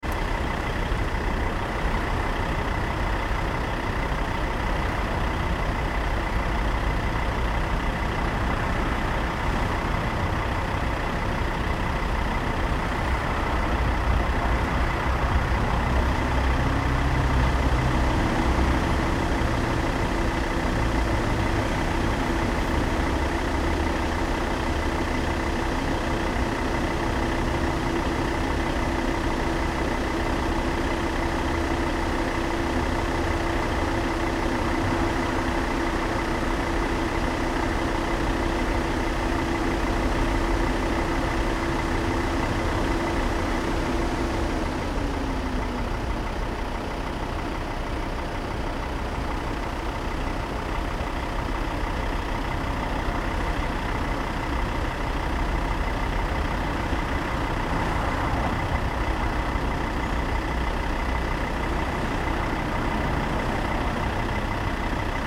Kidričeva, Nova Gorica, Slovenia - Sound of car's engine
Siting on a bench near the road recording the engine of a car parked nearby.